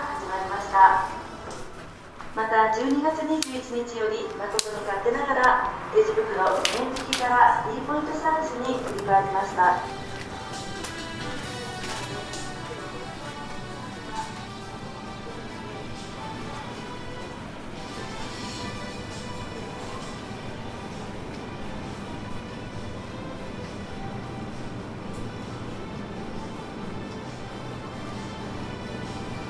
Japan Präfektur ChibaMatsudoShinmatsudo, ７丁目 - Maruetsu-supermarket
this is a walk through my prefered supermarket; this was real shopping to provide you with the original sounds you may hear when go for shopping there;
北葛飾郡, 日本, 11 February 2013